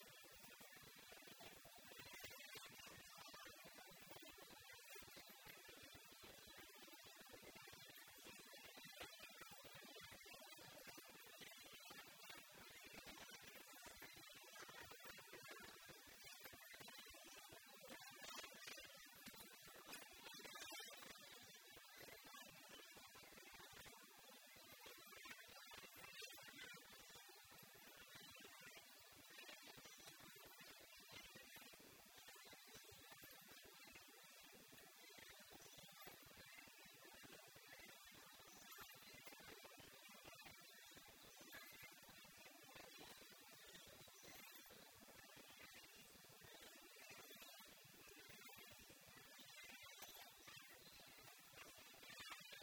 Kolhapur, Rankala lake, Infernal swing
India, Maharashtra, Kolhapur, Park, Swing, Children